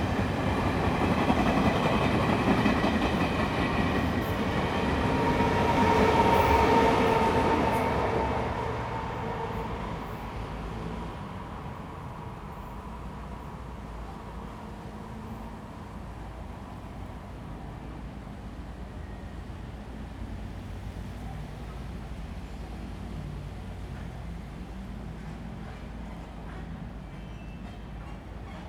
Xingzhu St., East Dist., Hsinchu City - Close to the rails
Traffic sound, Train traveling through, Construction sound
Zoom H2n MS+XY